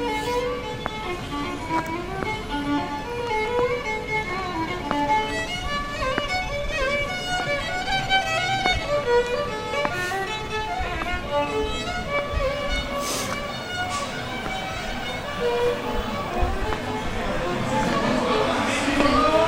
Paseo Balcon de Europa, Nerja - brandy, por favor
sitting down to the terrace of the tapas cafe, a violinist is playing irish folk tune near the place, I give a light to someone, having a brandy
Nerja, Málaga, Spain, 11 December 2007